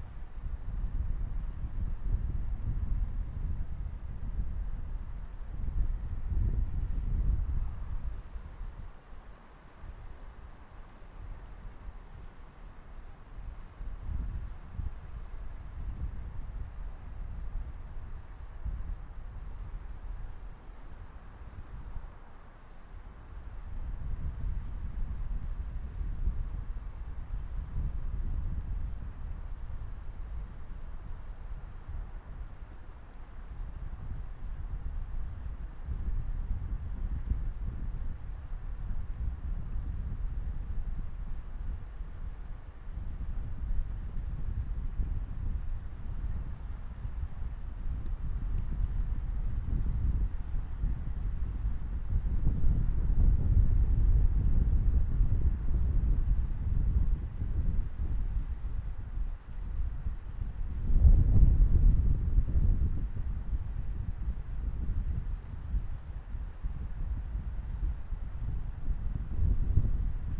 Pikes Peak Greenway Trail, Colorado Springs, CO, USA - Monument Creek on a windy day
Recorded alongside the Eastern side of Monument Creek on a windy day. Used a Zoom H1 recorder. Wind and babbling water can be heard in the soundscape.